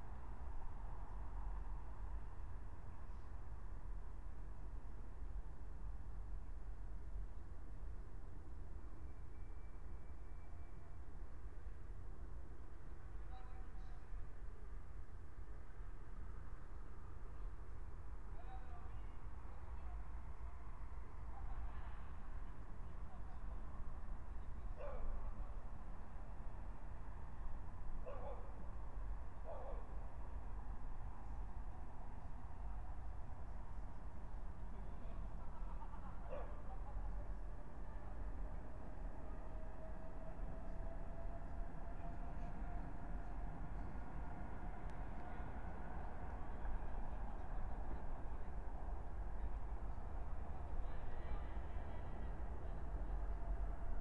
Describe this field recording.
22:58 Brno, Lužánky - park ambience, winter night, (remote microphone: AOM5024HDR | RasPi2 /w IQAudio Codec+)